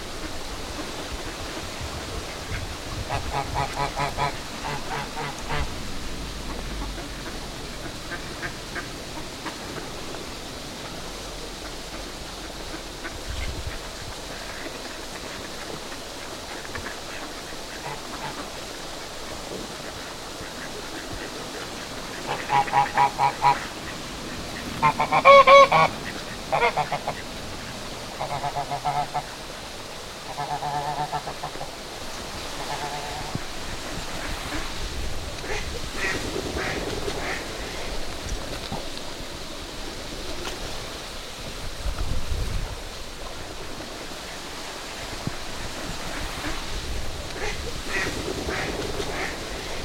Portesham, Dorset, UK - Duck and Geese Chorus
Ducks and Geese on a village green with babbling brook.
SDRLP project funded by the heritage lottery fund